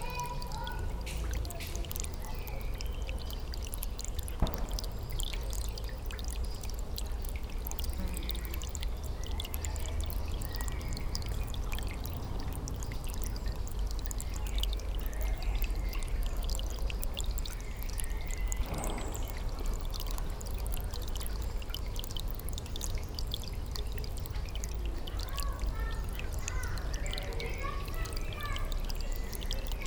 This is a completely abandoned pond. You can access it crossing brambles. It's a quiet place, in the back of the gardens. Sound of the rill, giving water to the pond, and children playing on a hot saturday evening.